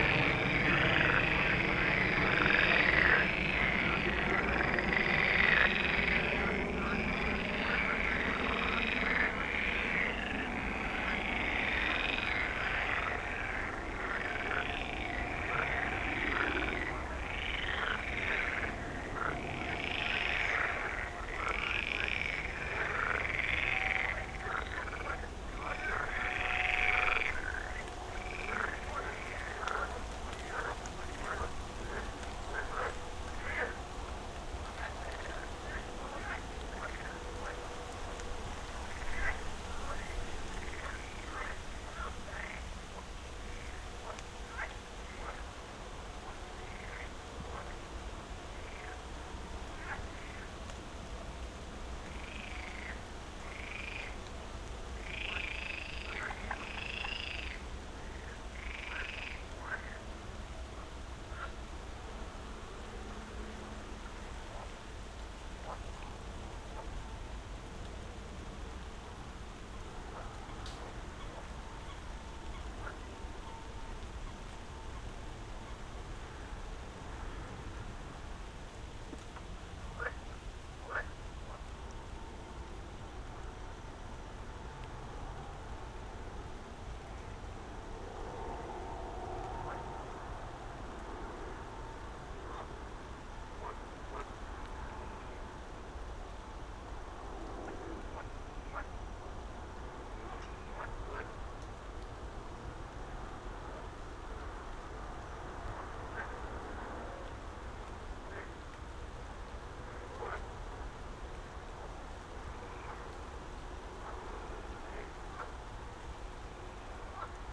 Moorlinse, Kleine Wiltbergstraße, Berlin Buch - frogs, Autobahn
frog concert at Moorlinse pond, noise of nearby Autobahn, a bit of rain and wind, an aircraft descending to Tegel airport, frogs compete in volume, than fade out
(SD702, Audio Technica BP4025)
Berlin, Germany, 12 June 2019, 23:00